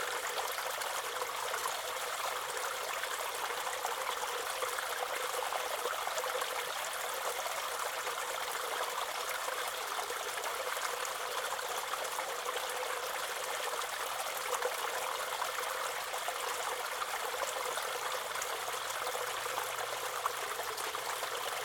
{"title": "Don Robinson State Park, Cedar Hill, Missouri, USA - Don Robinson Breached Dam", "date": "2021-05-15 16:13:00", "description": "Recording from breached dam in Don Robinson State Park.", "latitude": "38.40", "longitude": "-90.69", "altitude": "222", "timezone": "America/Chicago"}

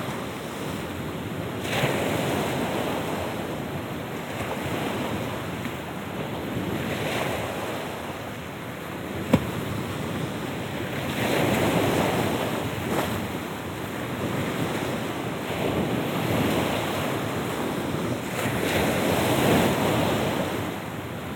{"title": "Roll forward waves, Russia, The White Sea. - Roll forward waves.", "date": "2015-06-21 23:30:00", "description": "Roll forward waves.\nНакат волны.", "latitude": "63.91", "longitude": "36.93", "timezone": "Europe/Moscow"}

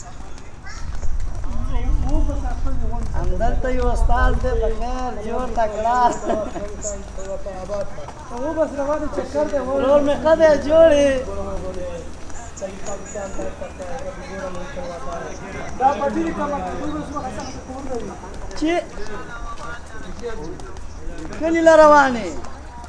{"title": "Thalgau, Austria - Walking with refugees III", "date": "2016-09-09 15:08:00", "description": "a group of refugees during a walk from their camp to a church community for an afternoon coffee. This is a regular activity initiated by local volunteers when the first refugees arrived to Thalgau in summer 2015. At the beginning it was mainly Syrians, most of whom meanwhile got asylum and moved to other places, mainly Vienna. The ones remaining are mostly men from Afghanistan and Iraq, who recently got joined by a group from Northern Africa. According to Austria’s current asylum policy they barely have a chance to receive asylum, nevertheless the decision procedure including several interviews often takes more than a year. If they are lucky, though, they might receive subsidiary protection. Despite their everyday being dertermined by uncertainty concerning their future, they try to keep hope alive also for their families often waiting far away to join them some day.\nDuring the last year, the image of refugees walking at the roadside became sort of a commonplace in Austria.", "latitude": "47.84", "longitude": "13.24", "altitude": "552", "timezone": "Europe/Vienna"}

